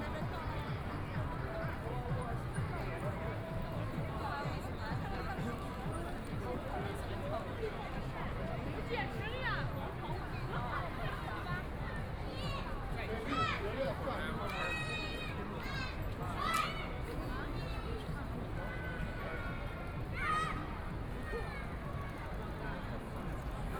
walking in the Store shopping district, Walking through the streets of many tourists, Binaural recording, Zoom H6+ Soundman OKM II